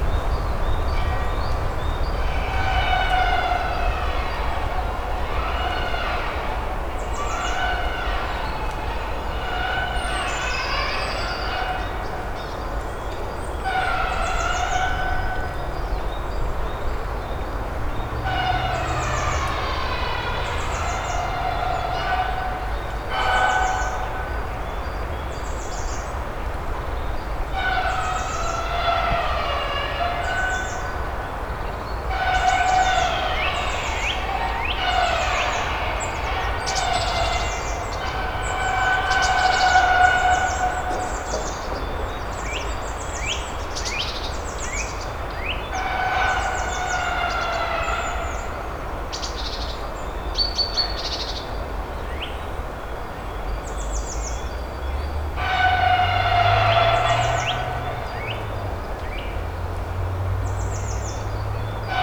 whine of a buzz saw sounding in the forest. (sony d50)
Poznań, Poland, 17 March 2016, ~12:00